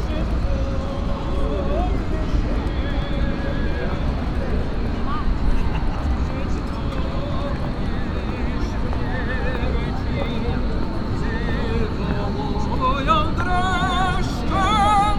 Alexanderplatz, Mitte, Berlin, Germany - walking
Sonopoetic paths Berlin
4 September 2015, 14:56